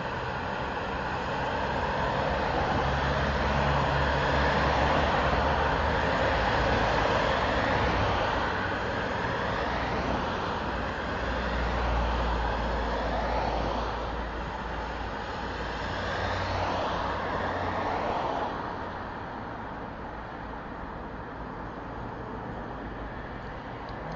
Thats just a quiet night on the bicycle bridge surpassing Åboulevard.
Region Hovedstaden, Danmark, European Union